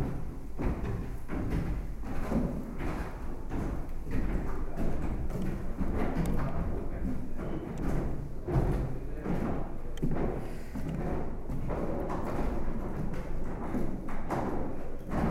Guimaràes, castelo
steps inside the castle